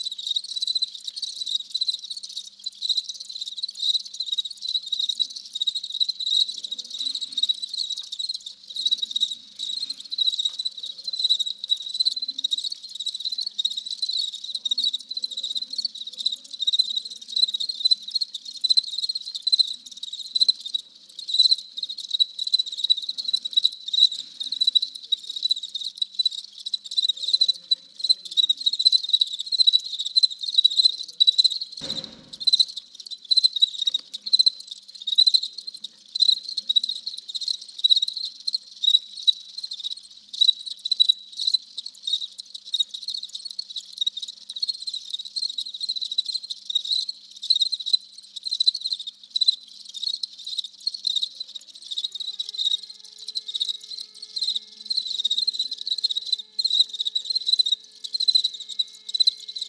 Cecrea La Ligua - Hundreds of crickets (close recording)
Close recording of crickets inside a plastic box during a laboratory with children in CECREA La Ligua (Chile).
The cricket are "fulvipennis" crickets, around 300 crickets are inside the box.
Recorded during the night trough two Sanken Cos11 D microphones, on a Zoom H1 recorder.
Recorded on 10th of July 2019.